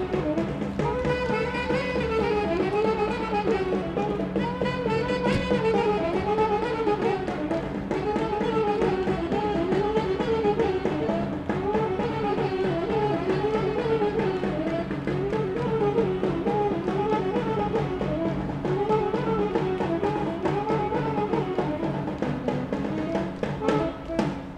Every year around Christmas time all sorts of makeshift bands travel the city playing repetitive patterns (they pretend they are doing traditional rhythms or carols but far from the truth) and hoping for passers by and people living in apartment blocks to give them money. Recorded with Superlux S502 Stereo ORTF mic and a Zoom F8 recorder.
România, 30 December 2016